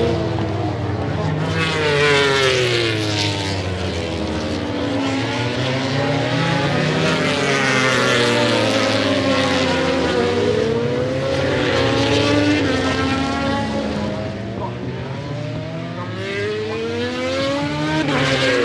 Derby, UK - british motorcycle grand prix 2007 ... motogp warmup ...
british motorcycle grand prix 2007 ... motogp warm up ... one point stereo mic to minidisk ...
East Midlands, England, United Kingdom, 24 June 2007